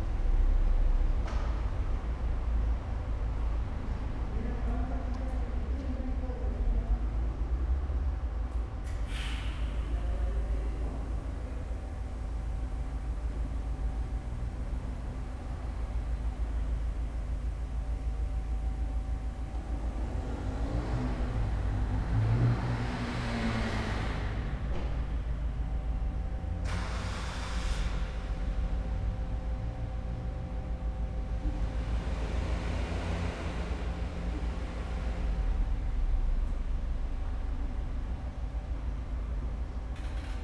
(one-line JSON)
{"title": "España, Moderna, León, Gto., Mexico - Verificación vehicular.", "date": "2020-02-01 14:07:00", "description": "It is a program aimed at controlling polluting emissions by inspecting these emissions directly in vehicles through electronic probes and bands, for subsequent approval or rejection. A center responsible for performing this operation is commonly called Verificentro.\nI made this recording on February 1, 2020 at 14:07\nI used a Tascam DR-05X with its built-in microphones and a Tascam WS-11 windshield.\nOriginal Recording:\nType: Stereo\nSe trata de un programa dirigido al control de las emisiones contaminantes mediante la inspección de dichas emisiones directamente en los vehículos a través de sondas y bandas electrónicas, para su posterior aprobación o rechazo. Un centro encargado de realizar esta operación es comúnmente llamado Verificentro.\nEsta grabación la hice el 1 de febrero 2020 a las 14:07\nUsé una Tascam DR-05X con sus micrófonos incorporados y un parabrisas Tascam WS-11.", "latitude": "21.13", "longitude": "-101.69", "altitude": "1811", "timezone": "America/Mexico_City"}